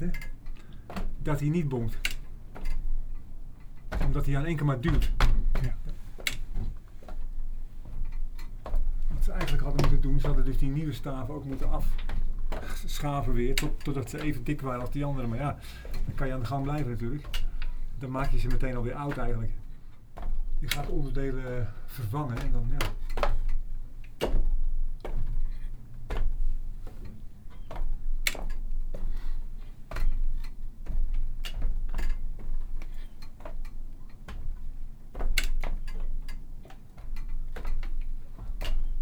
naar boven onder de molenkap zonder te malen
de trap op, tussenverdieping en dan boven onder de kap
taking the little stairs to go up under the roof of the windmill
Leiden, The Netherlands, 31 August 2011, ~12:00